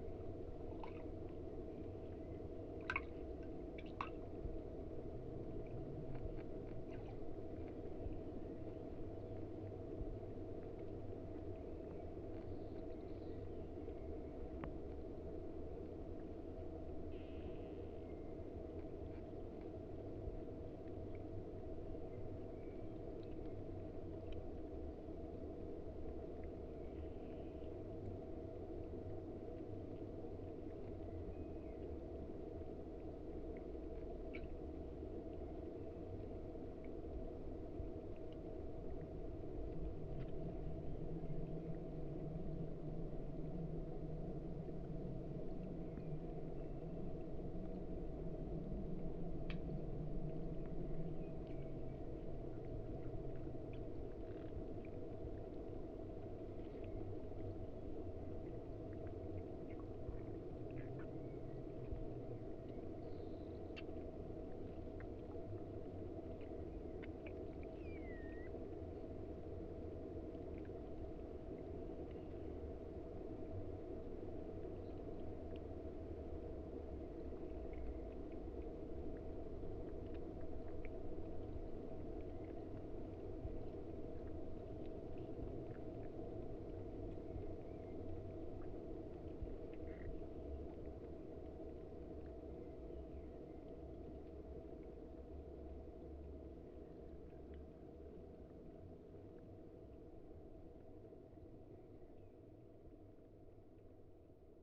Old Lock near Houtrustweg, Den Haag - hydrophone rec underneath the lock
Mic/Recorder: Aquarian H2A / Fostex FR-2LE
The Hague, The Netherlands, 29 April 2009, 18:40